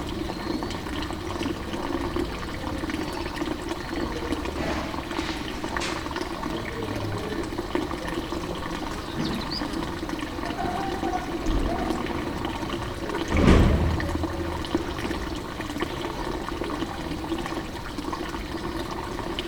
Rue Saint-Mary, Orcet, France - Fontaine devant l'église
20 September 2010